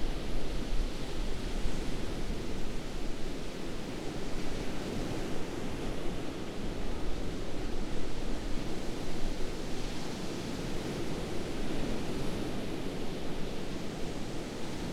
parque natural albufeira, playa
playa, mar wellen, olas, waves